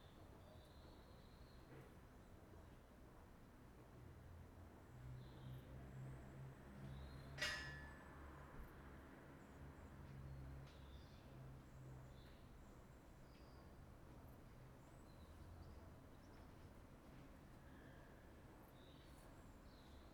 Montpelier train station, St Andrews, Bristol, UK - Montpelier train station at dusk
Ambient sounds of a small train station, birds chirp, distant cars are heard, and sometimes the sounds of people walking over the metal bridge that crosses over the track, two trains come in (train 1 at 3:05 and train 2 at 17:12)
Recorded with Roland R26 XY + Omni mics, only edit made was fade in/out